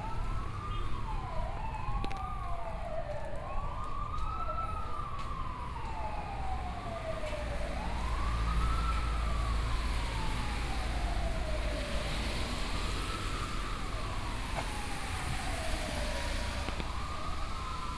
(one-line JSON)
{"title": "Guangfu Rd., Yilan City - walking in the Street", "date": "2013-11-05 09:20:00", "description": "Traffic Noise, Fire warning sound, Postman messenger sound, Binaural recordings, Zoom H4n+ Soundman OKM II", "latitude": "24.76", "longitude": "121.75", "altitude": "12", "timezone": "Asia/Taipei"}